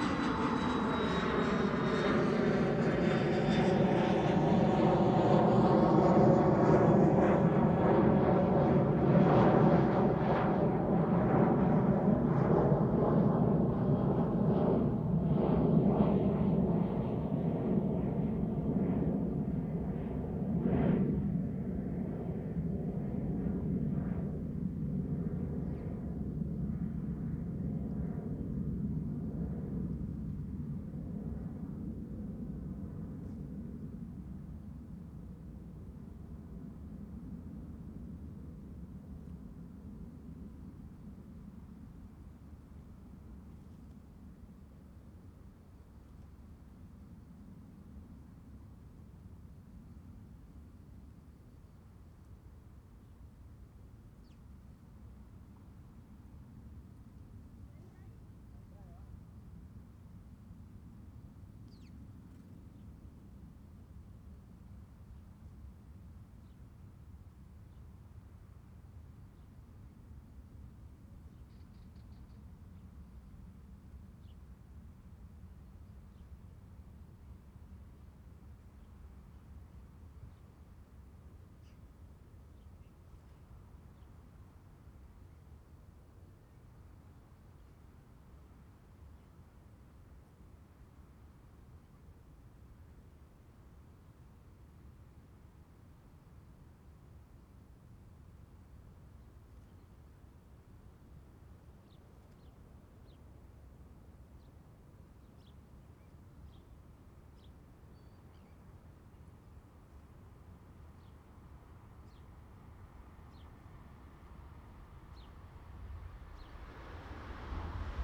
El Prat de Llobregat, Espagne - Llobregat - Barcelone - Espagne - Entre la plage et la piste de décollage.
Llobregat - Barcelone - Espagne
Entre la plage et la piste de décollage.
Ambiance.
ZOOM F3 + AKG C451B